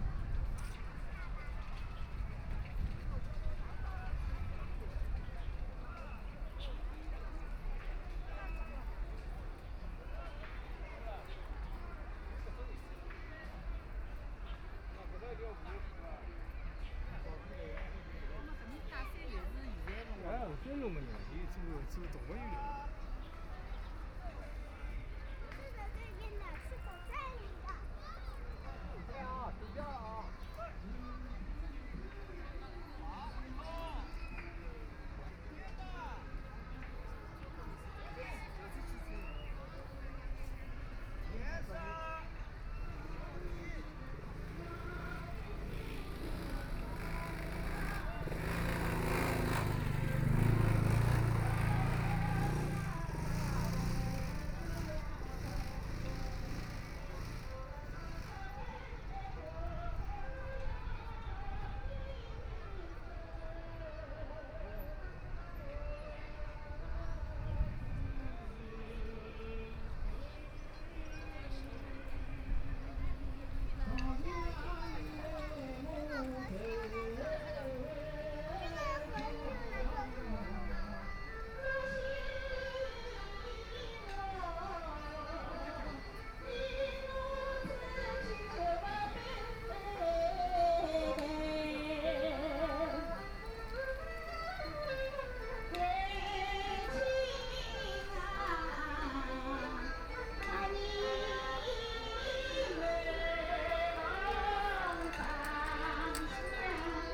Walking through the various areas in the park, Binaural recording, Zoom H6+ Soundman OKM II